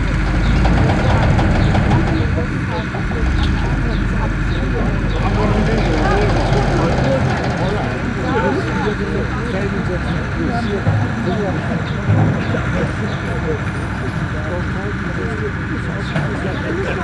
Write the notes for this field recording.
An der Anlegestation Hügel der Weissen Flotte. Der Klang eines Flugzeugüberflugs über den see, dann die Ankunft eines Boots, Passagiere, die das Boot besteigen und die Abfahrt des Bootes. At the landing station Hügel of the white fleet. The sound of a plane crossing the lake, the arrival of a boat, passengers talking and entering the boat and the departure of the boat. Projekt - Stadtklang//: Hörorte - topographic field recordings and social ambiences